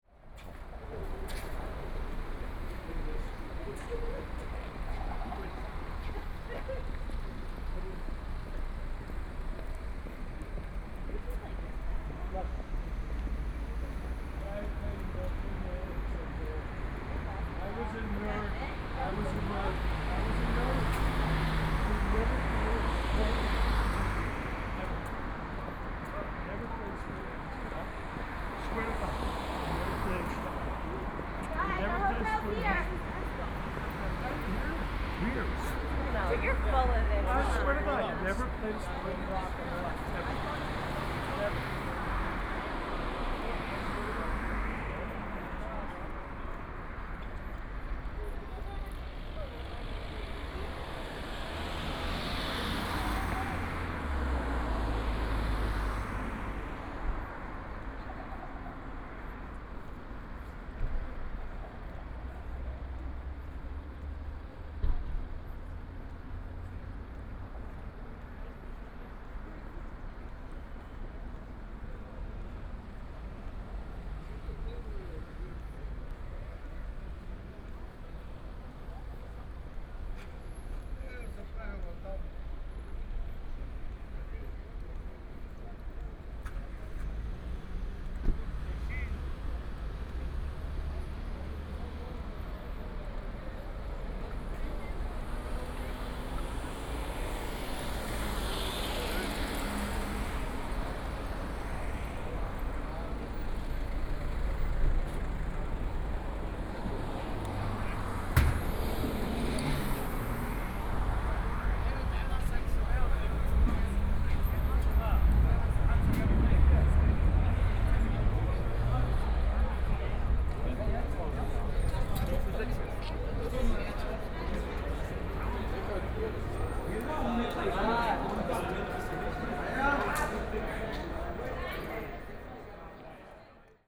Bayerstraße, Munich 德國 - walking in the Street
Walking on the streets at night, Traffic Sound, Voice from traffic lights